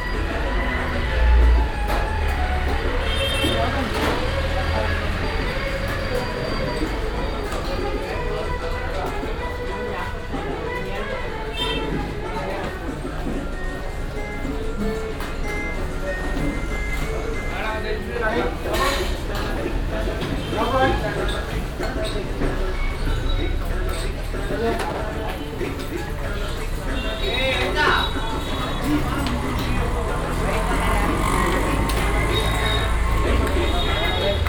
bangalor, karnataka, vegetarian restaurant
at a vegetarian restaurant, an open fire grill, customers coming in and out, indian music
international city scapes - social ambiences and topographic field recordings